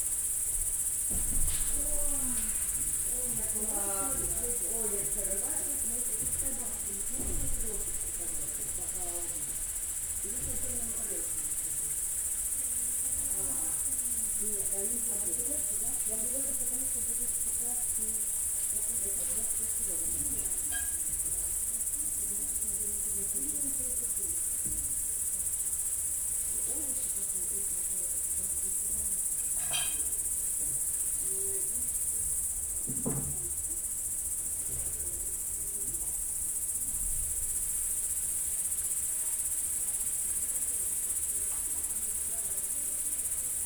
Каптаруны, Беларусь - Kaptaruni by night
people talking on the 1st floor, insects singing on the 2nd.
collection of Kaptarunian Soundscape Museum